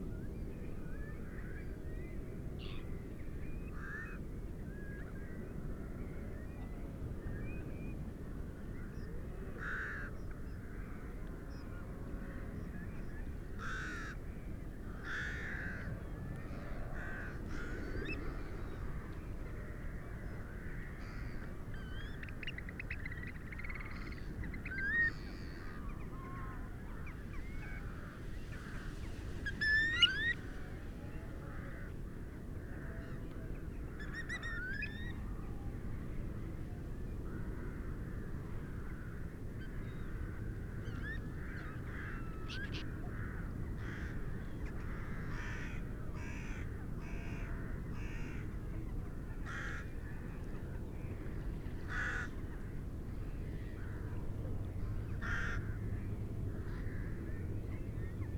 Budle Cottages, Bamburgh, UK - inlet soundscape ...
inlet soundscape ... small patch of sand visited by various flocks before they disperse along the coast ... bird calls from ... jackdaw ... crow ... rook ... black-headed gull ... common gull ... curlew ... dunlin ... oystercatcher ... wren ... parabolic ... background noise ...